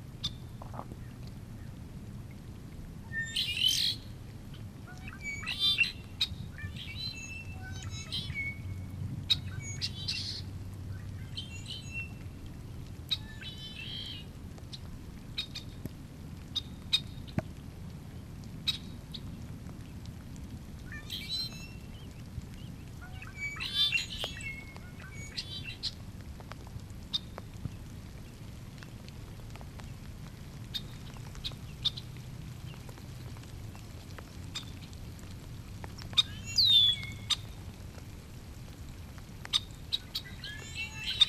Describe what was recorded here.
number of Red winged blackbird males doing there mating calls above the marshland of Tomales Bay ... In a second half of the recording you could hear a female responding